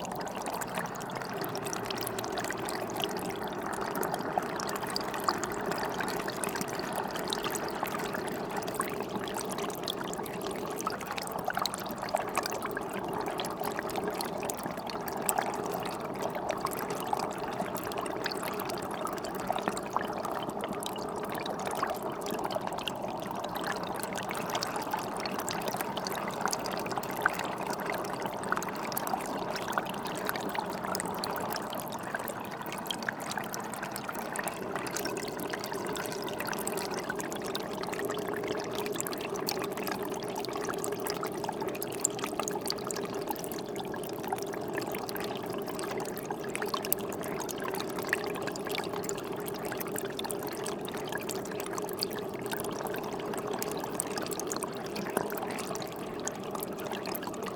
Differdange, Luxembourg - Hole in a wall
A small hole in a stone wall is spitting water. This makes a strange noise. Recorded binaural with microphones in the hole.